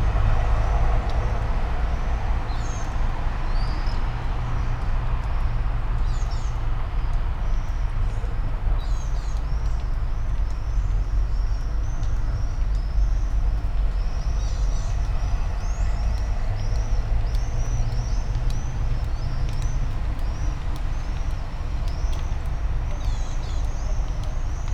all the mornings of the ... - jul 23 2013 tuesday 08:14